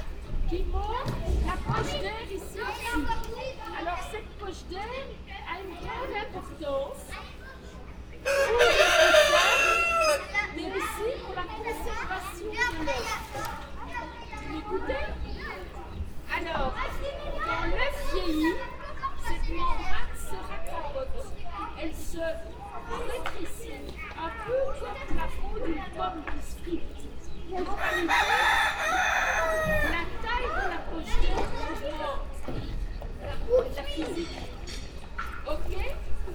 Quartier du Biéreau, Ottignies-Louvain-la-Neuve, Belgique - Children course
On the "place des sciences", a professor is giving a course about hens and roosters to very young children. The area is very noisy due to works.